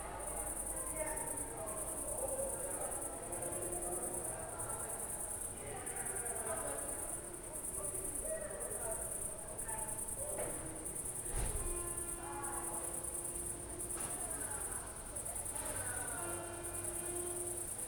from/behind window, Mladinska, Maribor, Slovenia - night cricket
summer night sounds